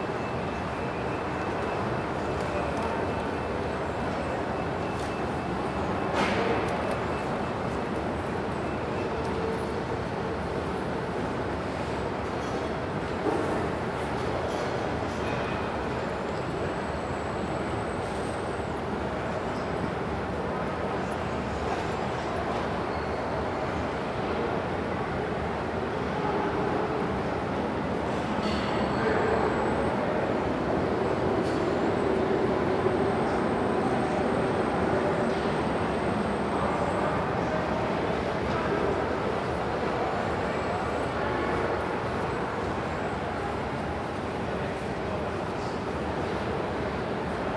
Wien Westbahnhof, Europaplatz, Wien, Österreich - Westbahnhof
waiting for the train